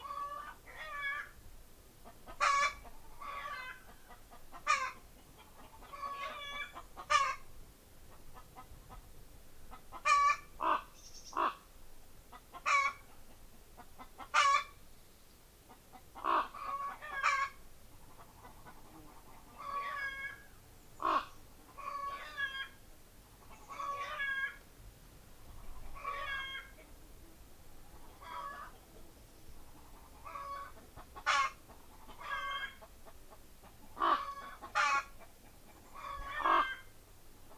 Symondsbury, UK - Chicken and Crow Chorus
Chicken and Crow Chorus, Quarr Lane, Symondsbury
Walking up one of West Dorset's iconic sunken lanes, we were suddenly assailed by a curious chorus of birds
Bridport, UK, 18 July 2016